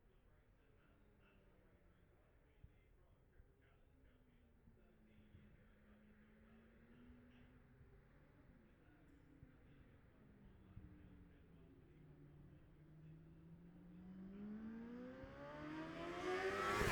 Jacksons Ln, Scarborough, UK - olivers mount road racing 2021 ...
bob smith spring cup ... 600cc group B qualifying ... luhd pm-01 mics to zoom h5 ...